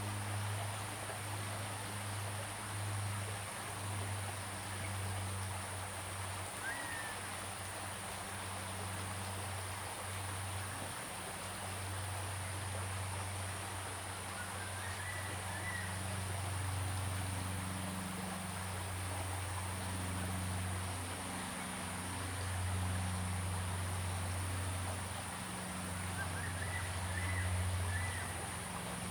Bird and Stream
Zoom H2n MS+XY